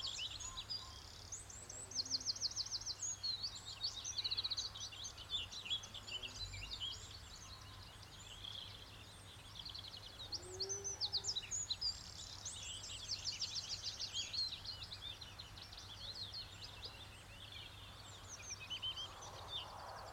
early morning, Co. Clare, Ireland
(sort of) morning chorus, wandering in a field